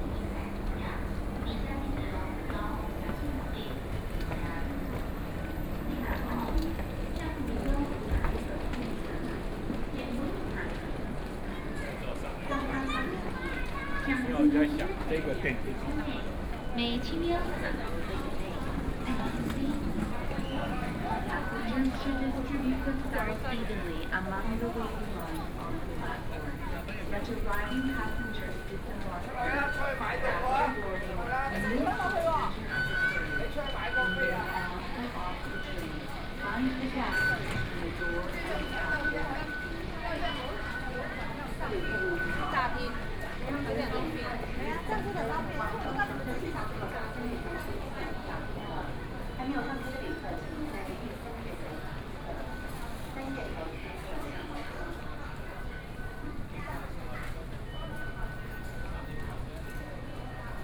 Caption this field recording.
walking out of the MRT station platform, Then to the railway counter, Binaural recordings, ( Proposal to turn up the volume ), Sony PCM D50+ Soundman OKM II